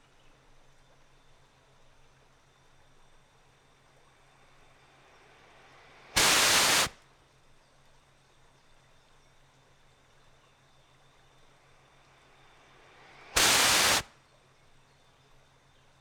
Bursts of steam from a pipe at the back of the mill. Walking Holme